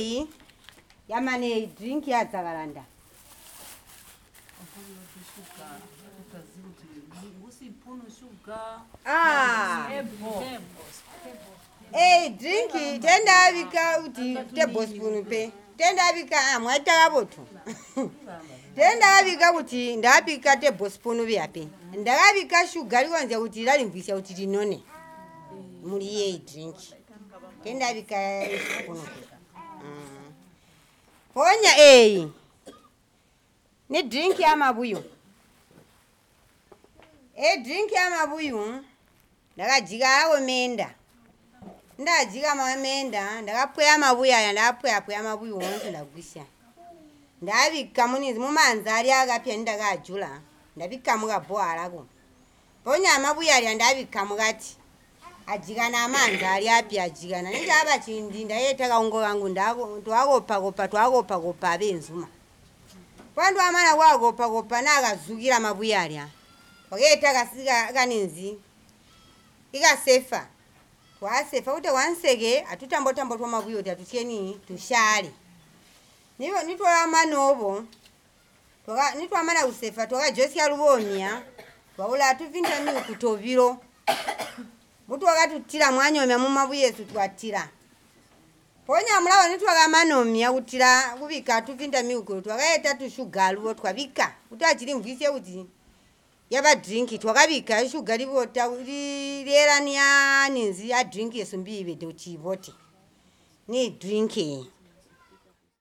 Lwiindi Ground, Sinazongwe, Zambia - how to make Saccaranda Drink...
Bina Annet tells us how to produce “Saccaranda Drink” which contains Moringa and lots of sugar ...